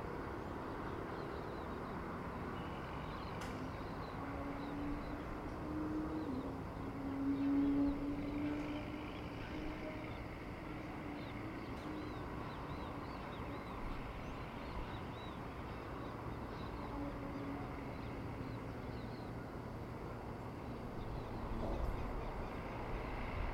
R. Jaqueira - Cidade das Flores, Osasco - SP, 02675-031, Brasil - manhã na varanda campo aberto
captação estéreo com microfones internos